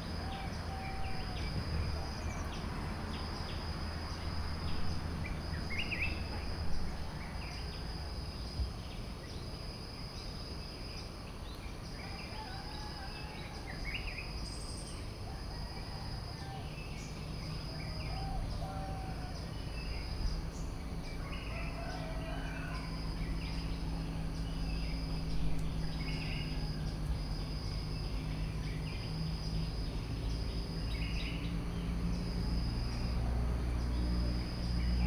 Tambon Hang Dong, Amphoe Hot, Chang Wat Chiang Mai, Thailand - Vögel Grillen Zikaden morgens Chom Thong bei Puh Anna
Crickets, cicadas and birds very early in the morning around the pond at Puh Annas guesthouse. A very soft atmosphere, slowly getting more lively.